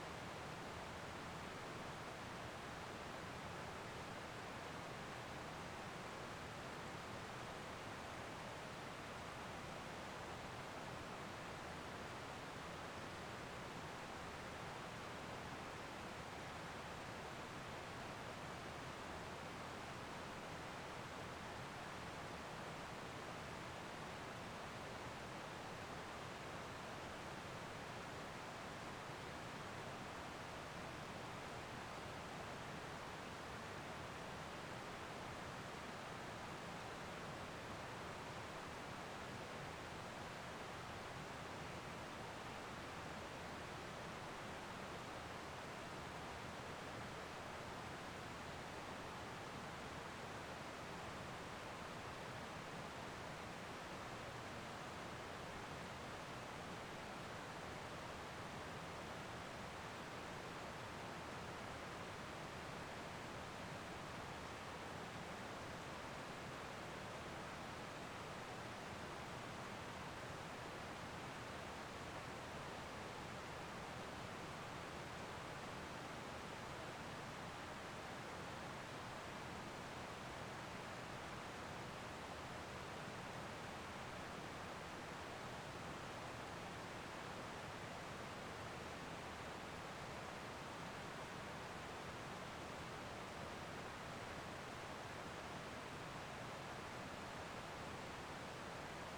Devils Den State Park - Night Time Campground Sounds
Night time sounds of Campground E at Devils Den State Park. It is mostly quiet with the exception of Lee Creek running in the background.
Arkansas, United States, 15 April 2022